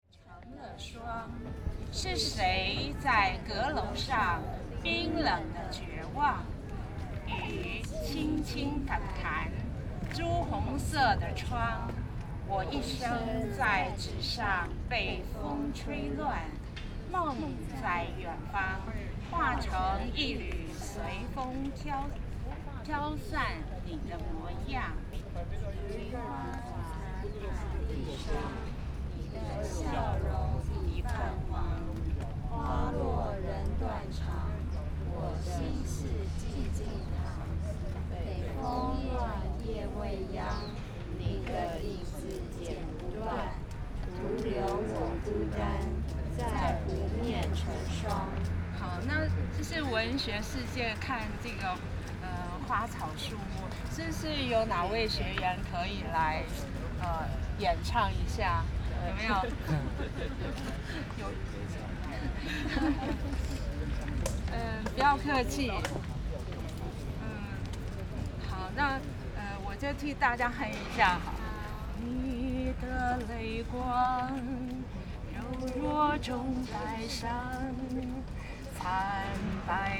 碧湖公園, Taipei City - Poet and the public
Poet and the public, Recite poetry
Zoom H6 XY + Rode NT4
Neihu District, Taipei City, Taiwan, 3 August 2014, 10:49